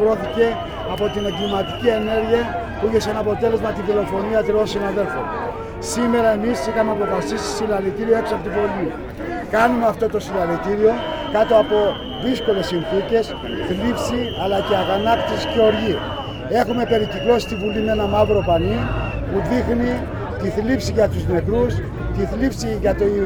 Athens. Interview with trade unionists - 06.05.2010
Ilias Vrettakos, assistant chairman, ADEDY.
Jiorgos Gabriilidis, assistent chairman, GESAE.
Περιφέρεια Αττικής, Ελλάδα, European Union, 11 May 2010, 13:25